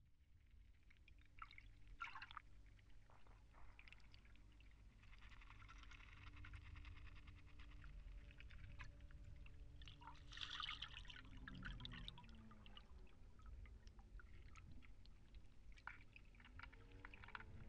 Mic/Recorder: Aquarian H2A / Fostex FR-2LE